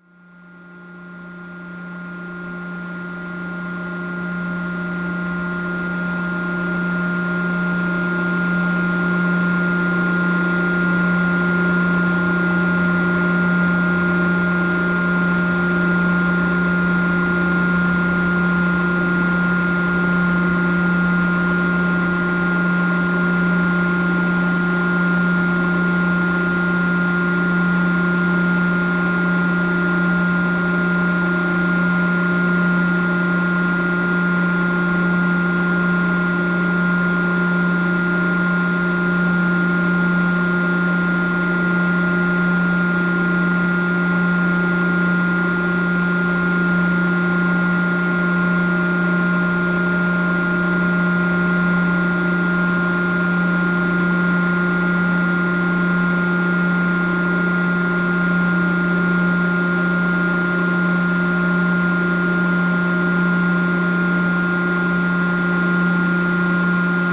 This is the biggest dump of Belgium. Here, a big pump is catching gas in the garbages.